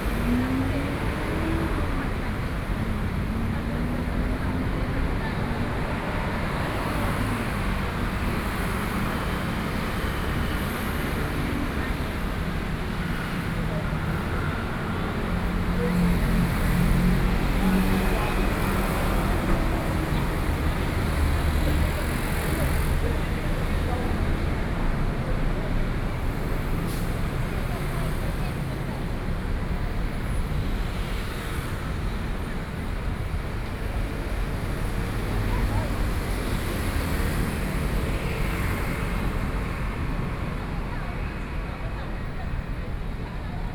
Roosevelt Road, Taipei - Soundwalk
walking out of the MR and the noise street, Sony PCM D50 + Soundman OKM II
1 July 2013, ~22:00